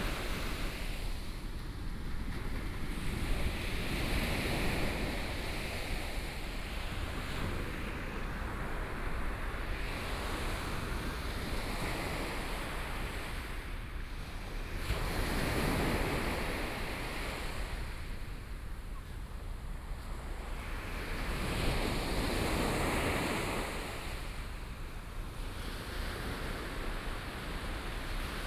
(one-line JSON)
{"title": "旗津區振興里, Kaoshiung City - Sound of the waves", "date": "2014-05-14 14:35:00", "description": "In the beach, Sound of the waves", "latitude": "22.61", "longitude": "120.27", "altitude": "1", "timezone": "Asia/Taipei"}